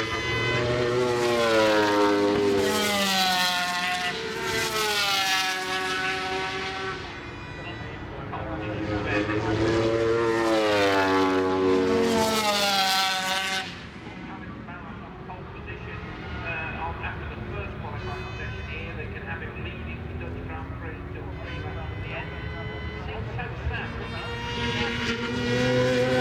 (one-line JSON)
{"title": "Castle Donington, UK - British Motorcycle Grand Prix 2002 ... 500cc ...", "date": "2002-07-14 10:00:00", "description": "500cc motorcyle warm up ... Starkeys ... Donington Park ... warm up and associated noise ... Sony ECM 959 one point stereo mic to Sony Minidisk ...", "latitude": "52.83", "longitude": "-1.37", "altitude": "81", "timezone": "Europe/London"}